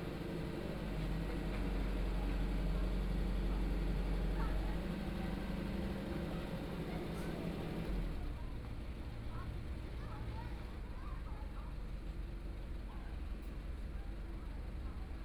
Fuyang Rd., Hualien City - In the Street
walking In the Street, Traffic Sound, sound of the Excavator traveling through
Please turn up the volume
Binaural recordings, Zoom H4n+ Soundman OKM II